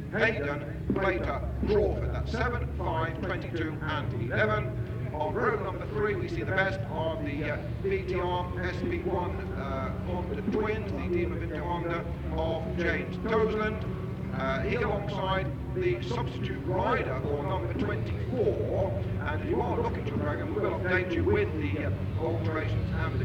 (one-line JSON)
{"title": "Silverstone Circuit, Towcester, United Kingdom - British Superbikes 2000 ... practice", "date": "2000-07-02 10:10:00", "description": "British Superbikes 2000 ... pratice ... one point stereo mic to minidisk ...", "latitude": "52.07", "longitude": "-1.02", "altitude": "152", "timezone": "Europe/London"}